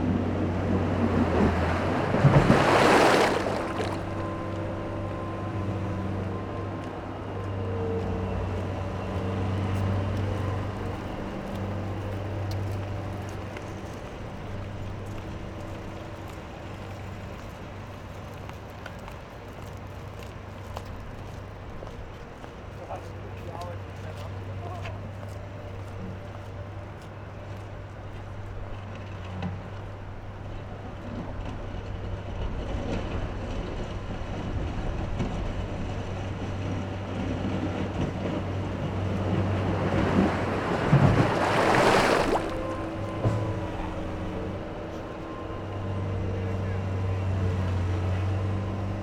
Saint James Lake draining with machines